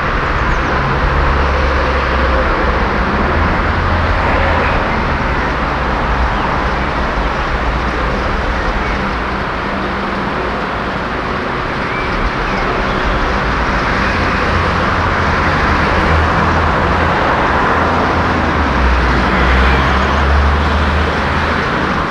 erkrath, neandertal, talstrasse, durchgangsverkehr
strassenverkehr der tal durchfahrtsstrasse, morgens
soundmap nrw: social ambiences/ listen to the people - in & outdoor nearfield recordings, listen to the people